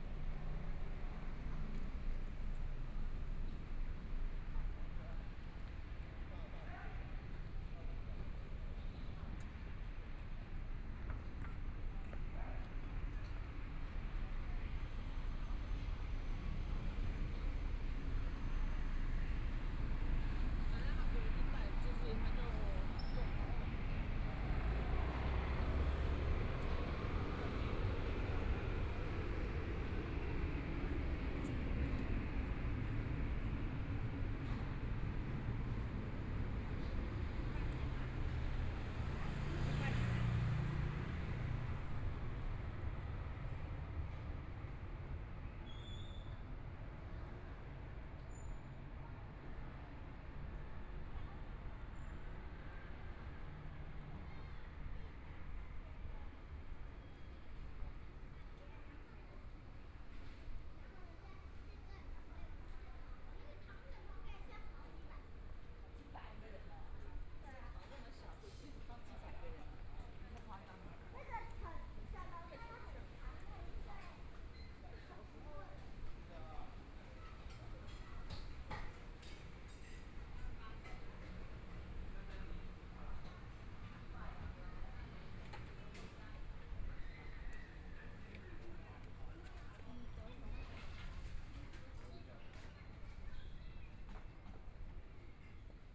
中山區大直里, Taipei City - Walking across different streets
Walking across different streets
Binaural recordings, Please turn up the volume a little
Zoom H4n+ Soundman OKM II